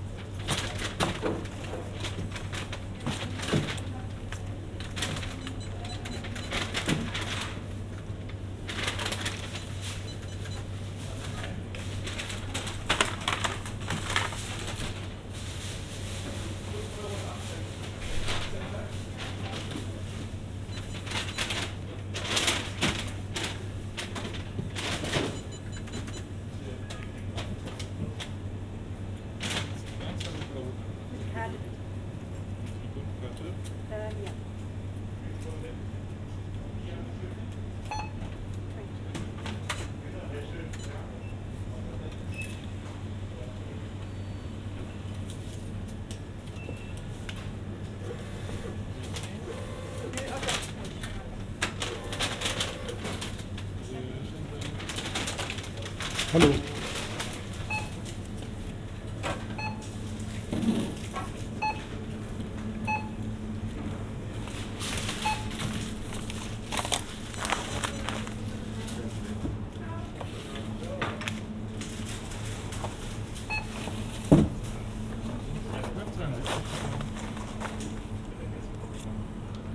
Neukölln, Berlin, Deutschland - bio company supermarket
ambience within the Bio Company eco supermarket
(Sennheiser Ambeo Headset, ifon SE)
Berlin, Germany, 25 February 2021